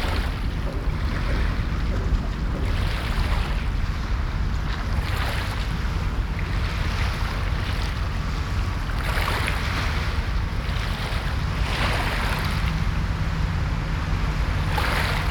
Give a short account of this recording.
waves, ships, binaural recording